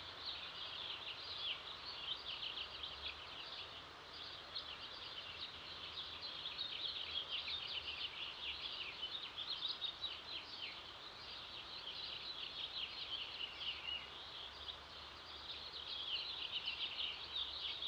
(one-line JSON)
{"title": "Early Morning Birds, Santa Fe", "latitude": "35.69", "longitude": "-105.95", "altitude": "2111", "timezone": "GMT+1"}